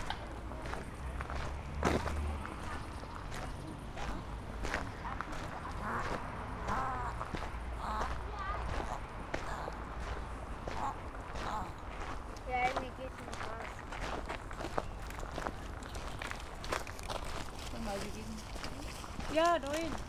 Berlin Buch, Wolfg.-Heinz-Str. - walking direction river Panke
Berlin Buch, Sunday evening, walking from Wolfgang-Heinz-Str. to river Panke, along a residential project for refugees, a skate park, manholes with water, a playground, and the almost silent river Panke.
(Sony PCM D50, Primo EM272)
Deutschland, 5 September 2021